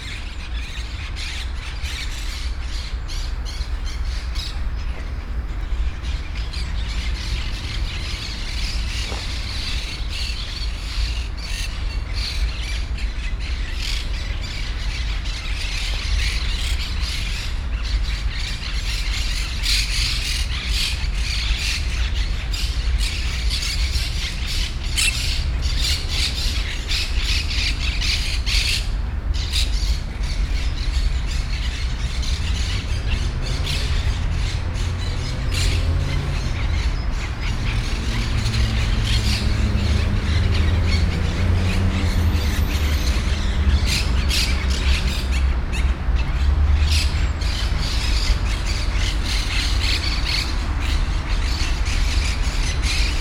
Parakeets - Perruches in the Forest Park, Brussels
Vorst, Belgium, 5 October 2010, 18:03